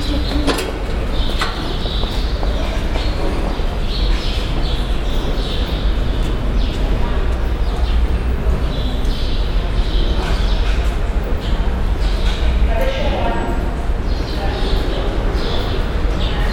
Qalandya checkpoint, project trans4m orchestra
מחוז ירושלים, מדינת ישראל